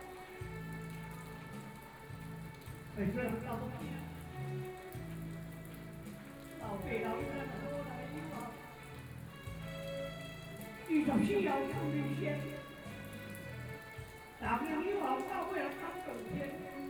Qingshui District, Taichung - funeral ceremony
Traditional funeral ceremony in Taiwan, Zoom H4n + Soundman OKM II
台中市, 中華民國, May 2013